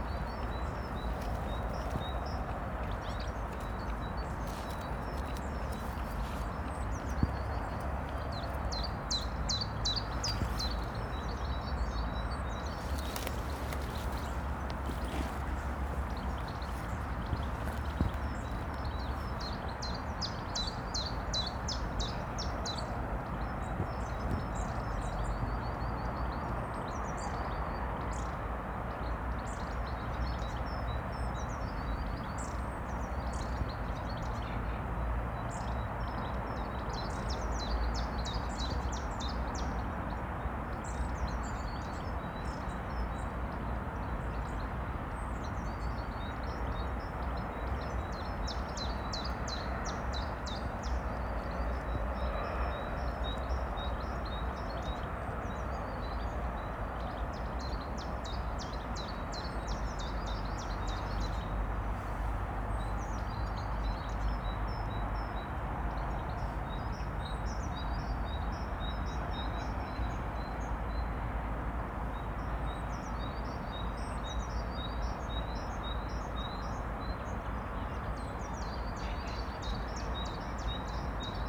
From the edge of the bridge, Prague, Czechia - From the edge of the bridge
From the edge of the bridge the traffic, trains and planes completely dominate the soundscape. However you are standing the same height as the tree tops. Birds singing or calling can be quite close and clear to hear. On this track is a chiffchaff and more distant goldfinches and great tits. The thumping sounds is wind ruffling the microphones.